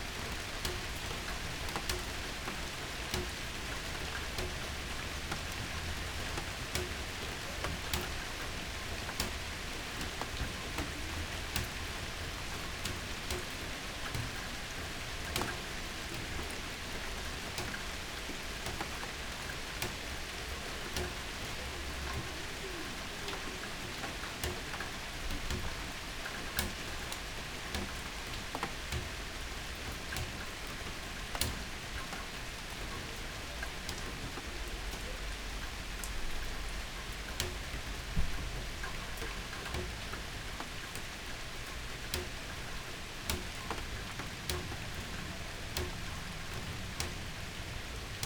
Berlin Bürknerstr., backyard window - spring rain
nice spring rain in the backyard, drops on the garbage bins
(Sony PCM D50)
Berlin, Germany